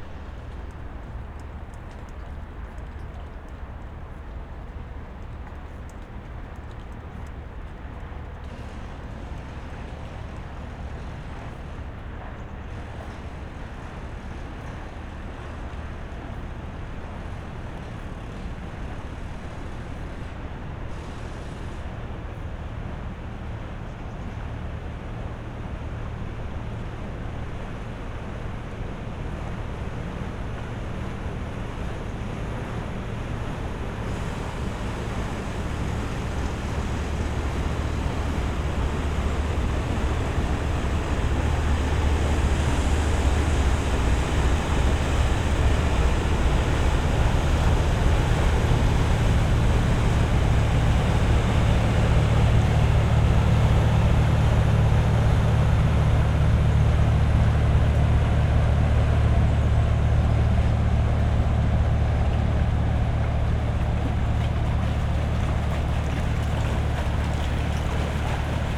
Britzer Verbindungs-Kanal, Baumschulenweg, Berlin - ship passing, engine drone
canal (Britzer Verbindungskanal), cargo ship passing, engine drone, waves
(SD702, Audio Technica BP4025)
Berlin, Germany, 17 August